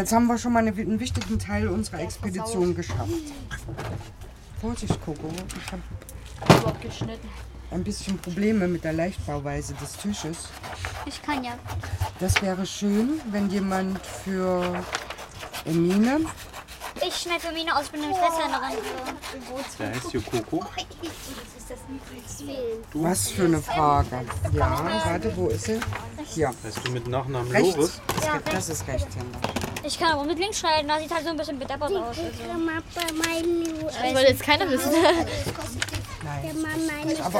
gotha, kjz big palais, im pavillon - donnerbuddys basteln
im außenpavillon am kinder- und jugendzentrum big palais beim basteln mit kleinen kindern. wir basteln donnerbuddys (zum film ted). kinderstimmen, betreuer, verkehr, passanten.
Gotha, Germany, 9 August, ~16:00